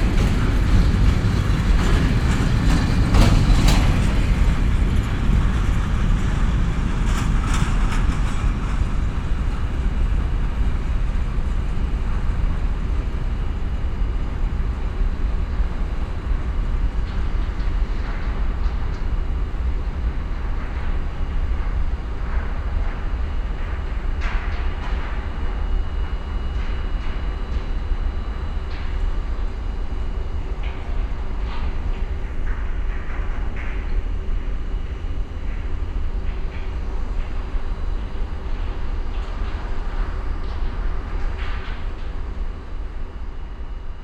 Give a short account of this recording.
freight train departs, afterwards the sound of the nearby power station, (Sony PCM-D50, DPA4060)